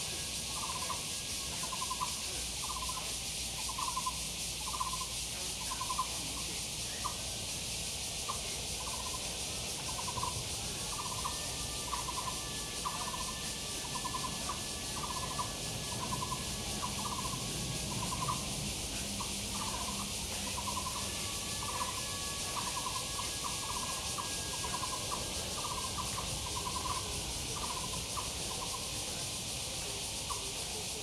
Many elderly people doing exercise in the park, Bird calls, Cicadas cry
Zoom H2n MS+XY
Taipei City, Da’an District, 台北聯絡線, 17 July